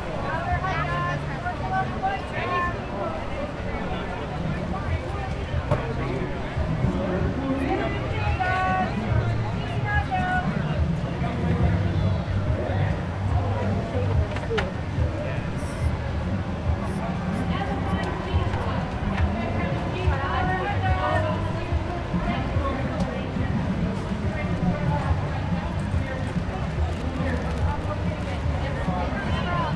neoscenes: Boy Scout hotdog stand